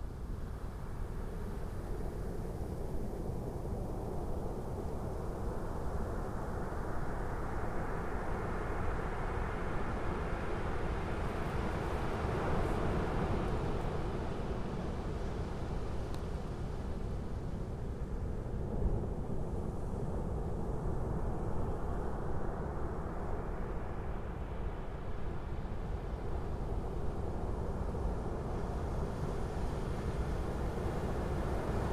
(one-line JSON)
{"date": "2007-01-03 15:18:00", "description": "Waves breaking on the beach in Porto, Portugal, 03/01/2007", "latitude": "41.17", "longitude": "-8.69", "altitude": "3", "timezone": "Europe/Lisbon"}